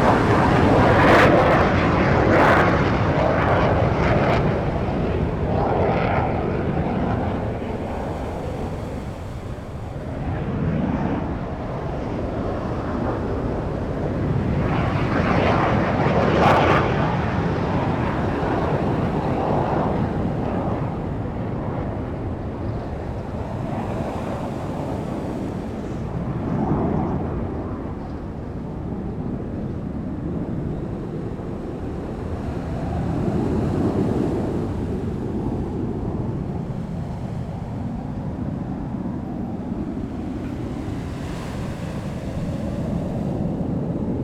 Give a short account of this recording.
Sound of the waves, At the seaside, Standing on the embankment, Traffic Sound, Zoom H6 XY +Rode NT4